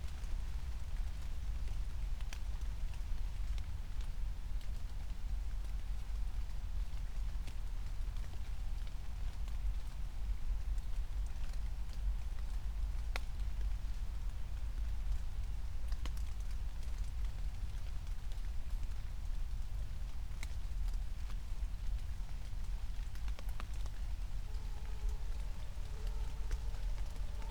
Königsheide, Berlin - forest ambience at the pond
3:00 drone, still raining, a siren in the distance
Deutschland, 23 May 2020